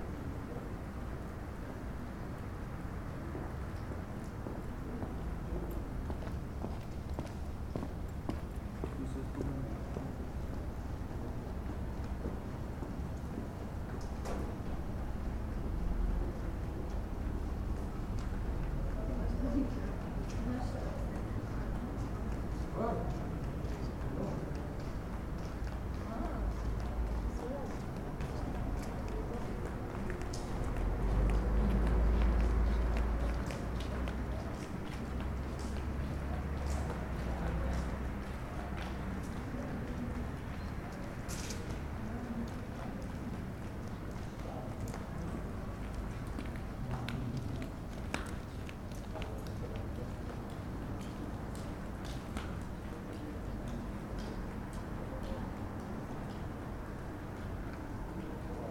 Tkalski prehod, Gosposka ulica, Maribor, Slovenia - corners for one minute
one minute for this corner: Tkalski prehod and Gosposka ulica